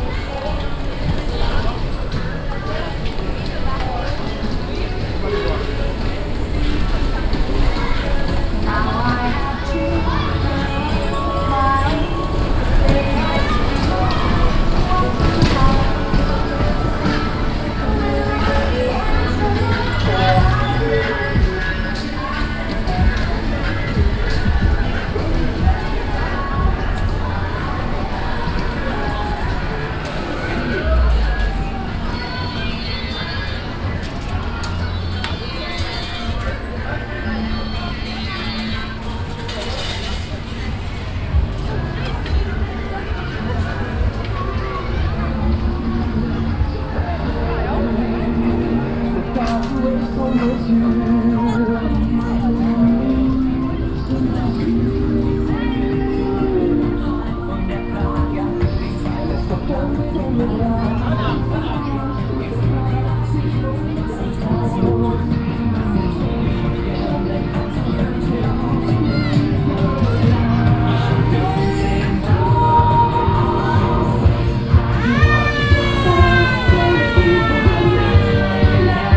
Walking across the kermess place. Various music and game sounds. At the end a father and children at the trampolin stand.
international village scapes - topographic field recordings and social ambiences